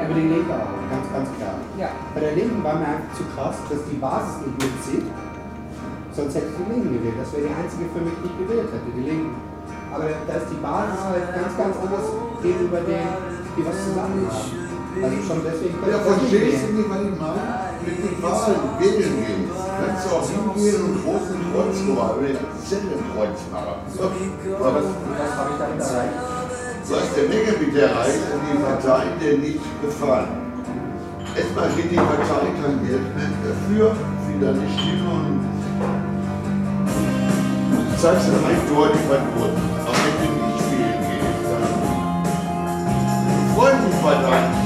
Frohnhausen, Essen, Deutschland - anyway
anyway, berliner str. 82, 45145 essen